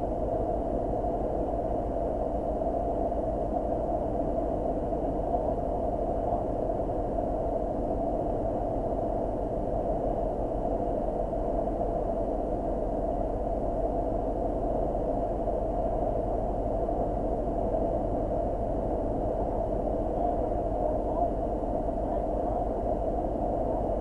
Tarbert, UK - Ferry drones
Vibrations recorded on a ferry to the Isle of Islay.
Recorded with a Sound Devices MixPre-6 mkII and a LOM Geofón.
Alba / Scotland, United Kingdom